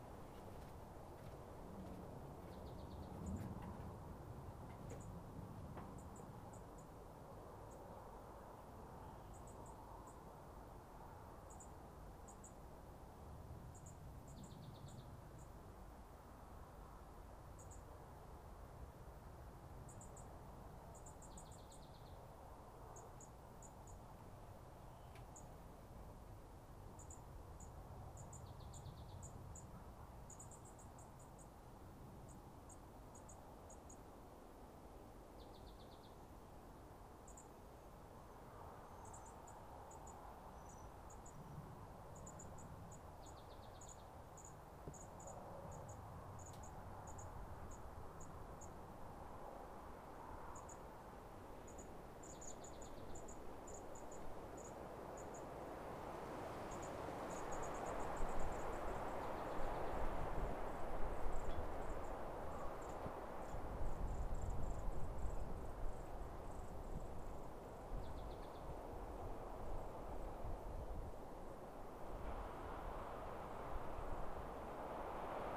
Glorieta, NM, so called USA - GLORIETA vibez
later that same day... chinqi listens closely again